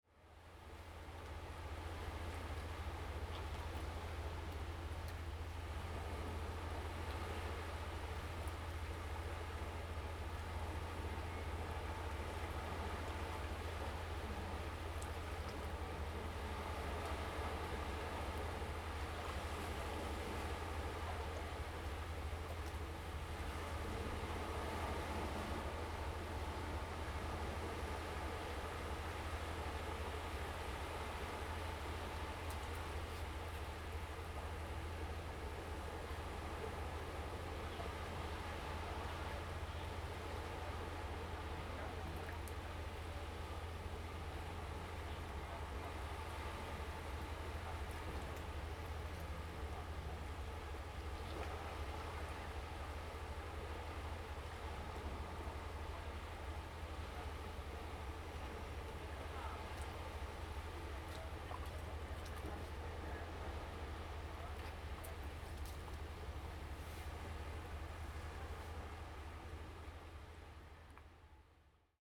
山豬溝, Hsiao Liouciou Island - Waves and tides
Waves and tides
Zoom H2n MS +XY
Pingtung County, Taiwan, November 2014